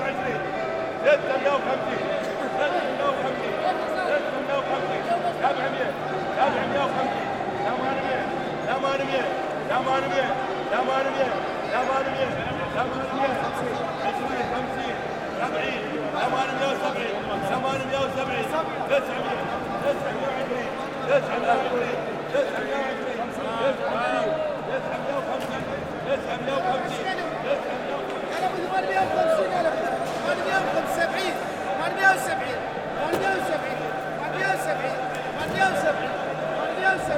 Sharjah - United Arab Emirates - Fish Auction
Every day at 5pm, the fish merchants display their catches in a circular space in the entrance of Souq Al Jubail. This recording is walking amongst the merchants as they shouted out their prices.